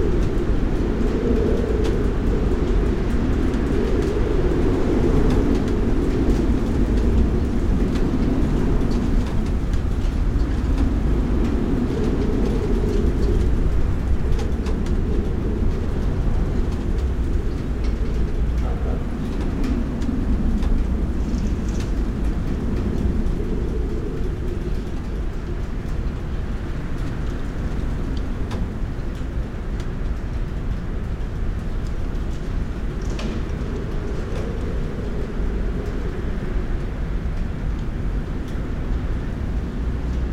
Utena, Lithuania, wind
Strong wind, gusts to 70 km/h. I have found some place to hide my mics...
Utenos rajono savivaldybė, Utenos apskritis, Lietuva, 17 January 2022, ~17:00